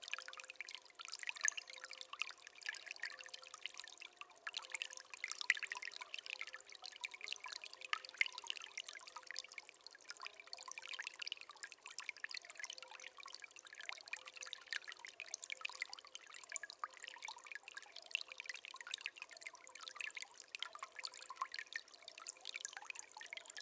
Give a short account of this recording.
close-up recording of little streamlet